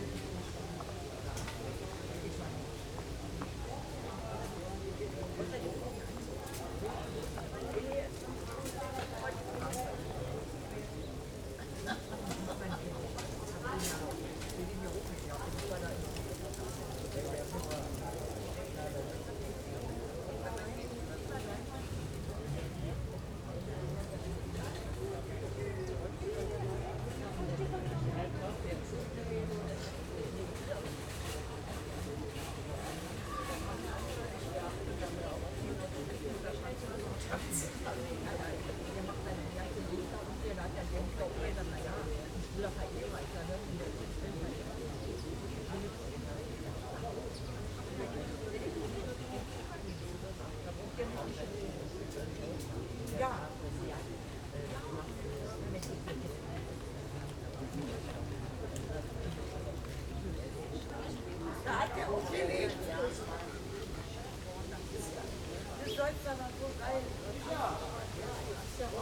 berlin, liepschitzallee - square ambience
square near liepschitzalle, gropiusstadt, ambience
Berlin, Germany, 6 August 2011